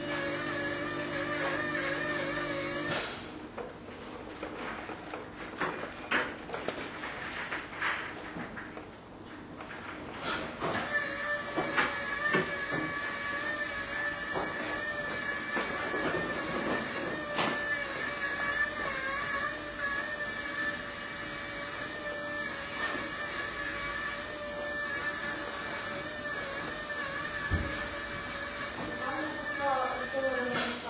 madrid, Calle de Isaac Peral, dentist
Madrid, Spain